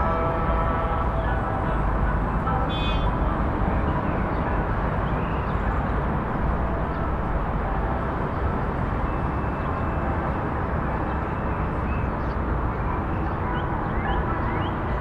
Filopappou Hill, Athens, Grecja - (515) City ambient from Filopappou Hill
City ambient with music from Filopappou Hill in Athens.
recorded with Soundman OKM + Sony D100
posted by Katarzyna Trzeciak